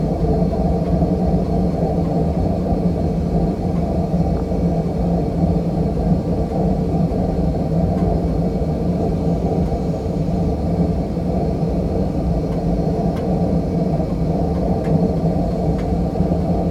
Rheinfelden, Obere Dorfstrasse - water heater
hum and rattle of a big water heater.
Rheinfelden, Germany, 13 September